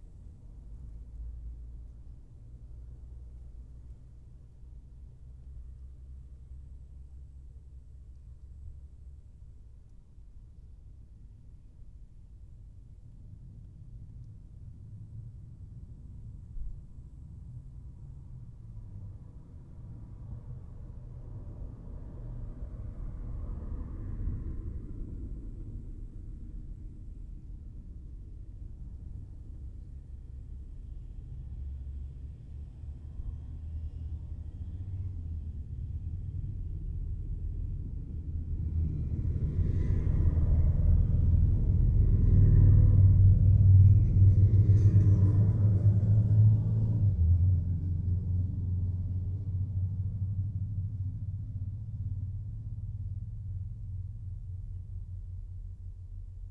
Ginučiai, Lithuania, land reclamation tube - land reclamation tube
small microphones placed in the land reclamation tube